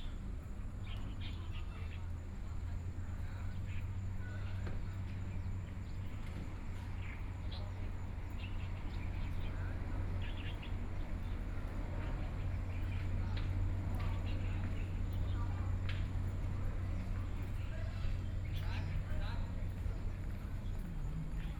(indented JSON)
{
  "title": "蘇澳鎮北濱公園, Yilan County - in the Park",
  "date": "2014-07-28 15:48:00",
  "description": "in the Park, Traffic Sound, Birdsong sound",
  "latitude": "24.58",
  "longitude": "121.87",
  "altitude": "11",
  "timezone": "Asia/Taipei"
}